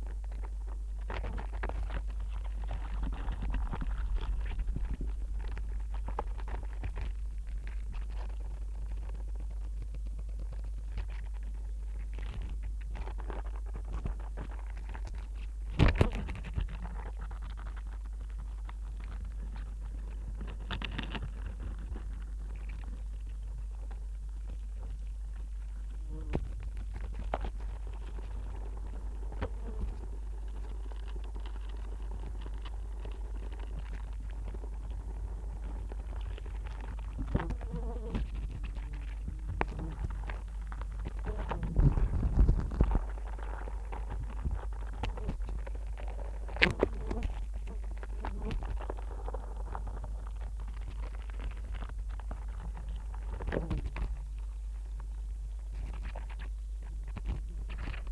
fallen fruit attracting wasps and flies